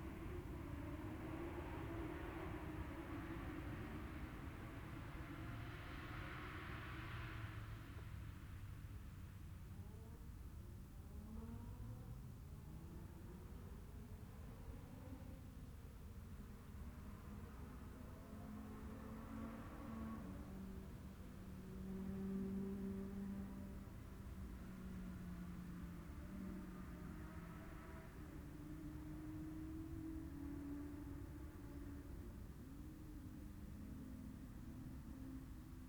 Cock o' the North Road Races ... Oliver's Mount ... Classic Racing Machines practice ...